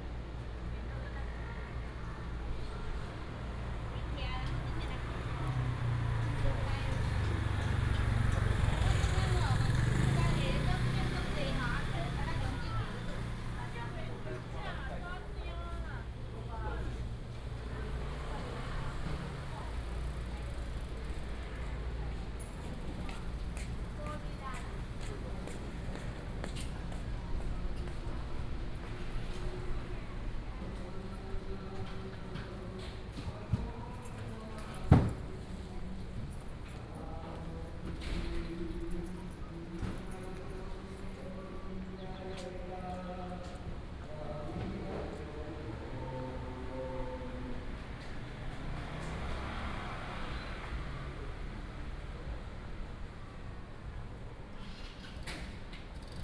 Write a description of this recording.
Walking in a small alley, Traffic Sound